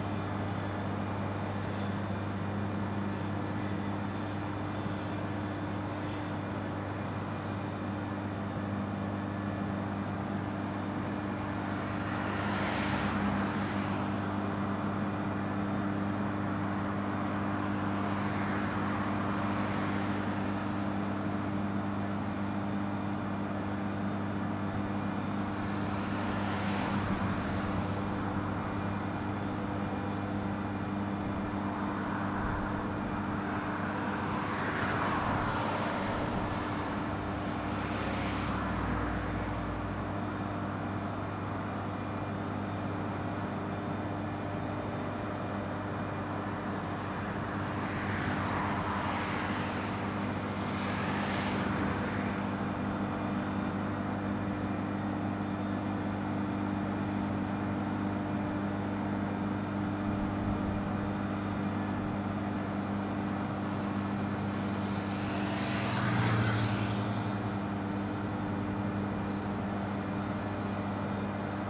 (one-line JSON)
{"title": "Frimmersdorf, Kraftwerk", "date": "2011-10-03 14:50:00", "description": "Frimmersdorf powerplant, sound of generators", "latitude": "51.06", "longitude": "6.58", "altitude": "71", "timezone": "Europe/Berlin"}